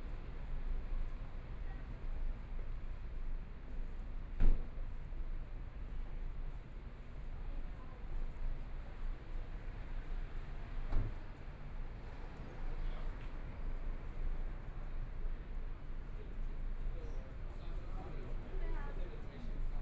Minquan E. Rd., Taipei City - Traffic Sound
Night walk in the road, Traffic Sound
Please turn up the volume
Binaural recordings, Zoom H4n+ Soundman OKM II